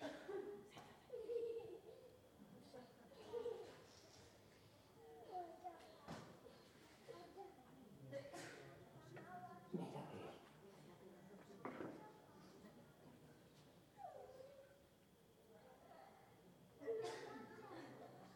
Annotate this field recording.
Bolulla - Province d'Alicante - Espagne, Cinéma en plein air, Ambiance 2, ZOOM F3 + AKG 451B